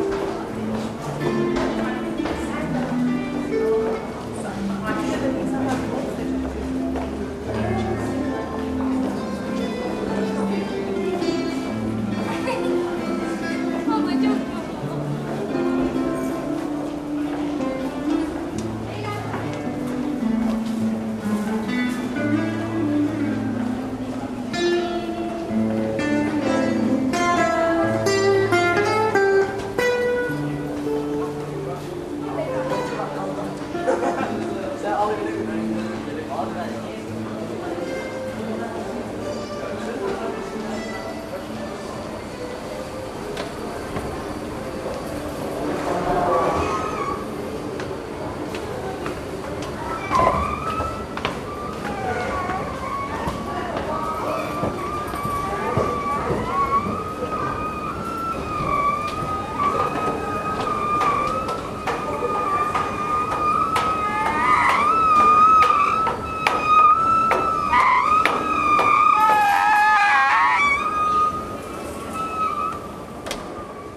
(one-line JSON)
{"title": "Levent metro station, a week of transit, monday morning - Levent metro station, a week of transit, thursday afternoon", "date": "2010-09-30 15:05:00", "description": "Isn diversity just repetition on different scales?", "latitude": "41.08", "longitude": "29.01", "altitude": "143", "timezone": "Europe/Istanbul"}